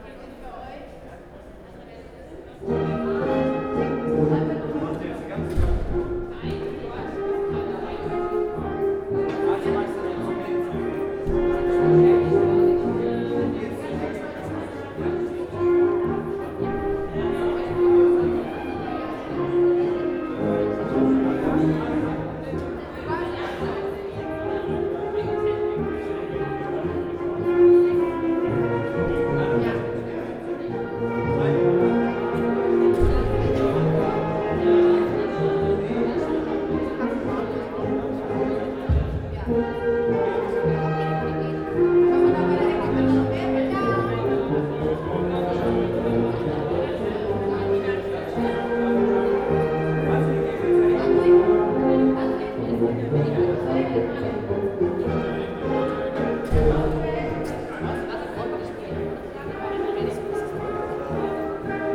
Schwäbisch Gmünd, Deutschland - Central Hall of Gmuend Tech University at noon
Central of Gmuend Tech University at noon, promotional event for first semester party
Schwäbisch Gmünd, Germany, 12 May 2014